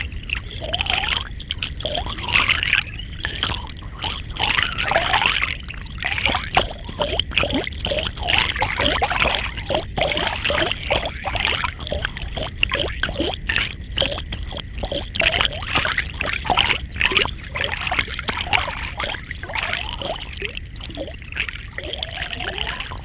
Underwater recording in Svratka river in Zidlochovice. I was recording in several different depths. It was from 3 meters to 10 centimetres. Temperature was -10 Celsius.
Jihomoravský kraj, Jihovýchod, Česko